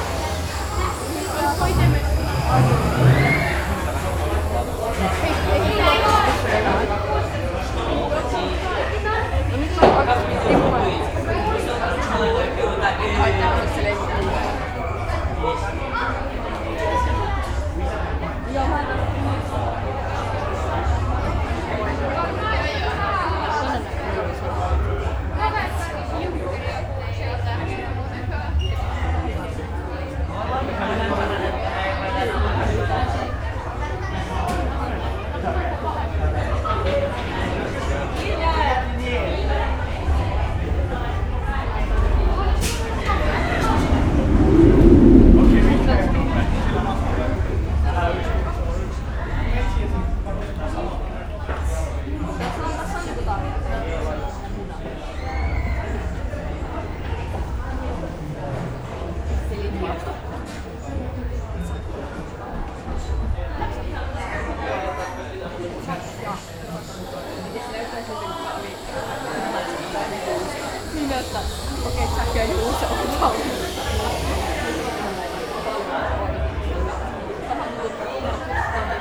Sounds from the queue of launched roller coaster 'Taiga' in Linnanmäki amusement park, Helsinki. Zoom H5, default X/Y module.
Uusimaa, Manner-Suomi, Suomi, 21 July 2020